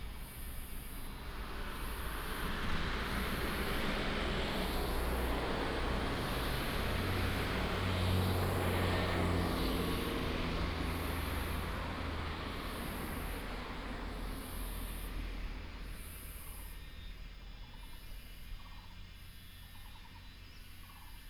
zoo zoo cafe, Fuxing Dist., Taoyuan City - Cicada and birds sound
Cicada cry, traffic sound, Birds